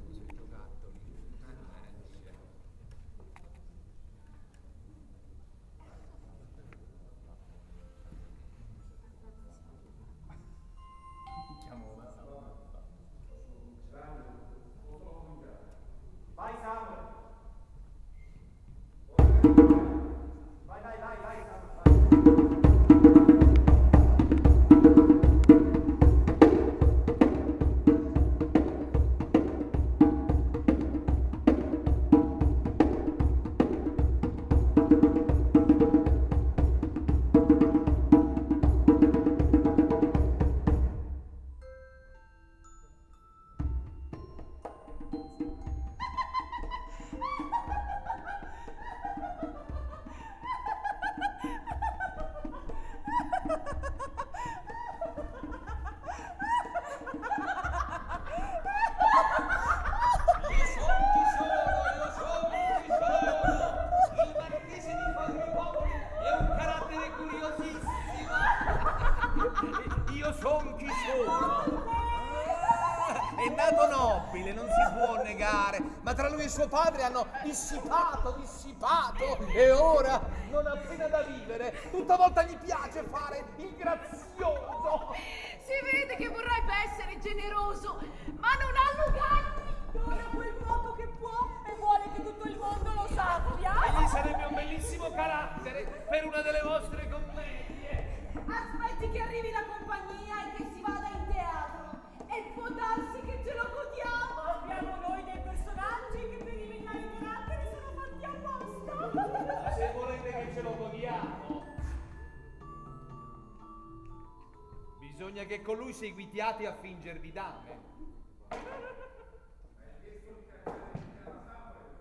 stanno provando sul palcoscenico La locandiera di Carlo Goldoni. voci di attori e tecnici. edirol R-09HR